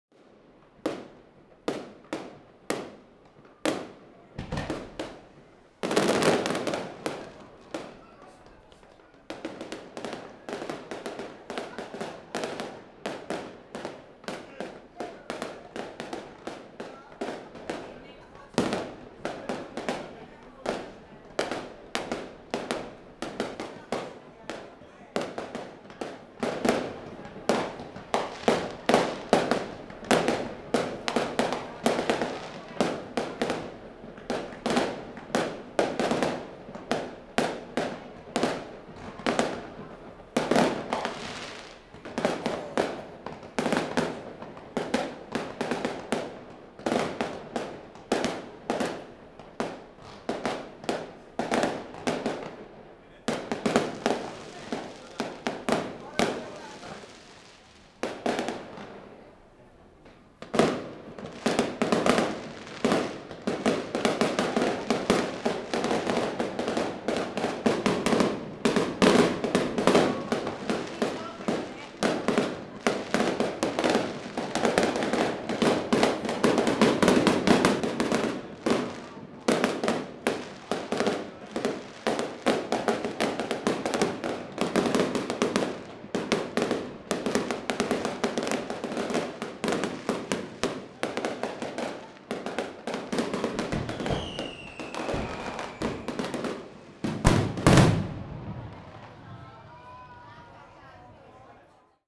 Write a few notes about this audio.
Anniversary of the Vision of Saint Pelagia. Fireworks during the Holy Procession recorded by the soundscape team of EKPA university for for KINONO Tinos Art Gathering. Recording Equipment:Zoom Q2HD